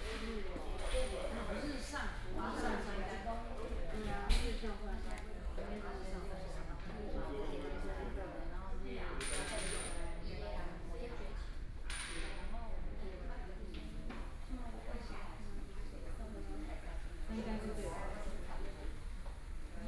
KFC, Jungli City - Chat
In the fast-food chicken restaurant, Zoom H4n + Soundman OKM II
Zhongli City, Taoyuan County, Taiwan, 12 August 2013, 14:21